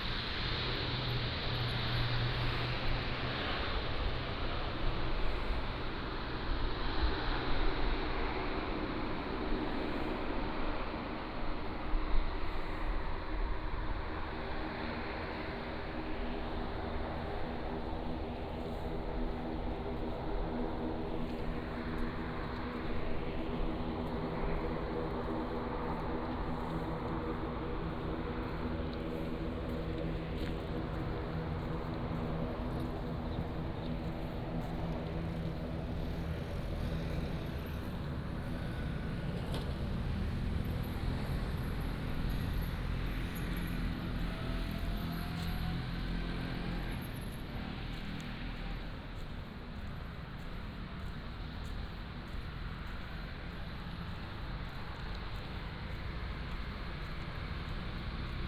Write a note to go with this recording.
Birds singing, In the parking lot, outside the airport, The sound of aircraft landing